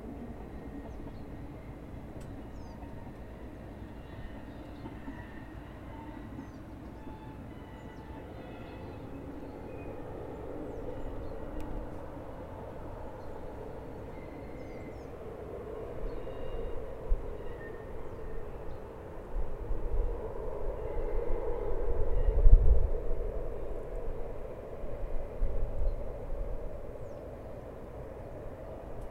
Abstieg zu Fuss, steiler Weg neben den Kehren der Rhätischen Bahn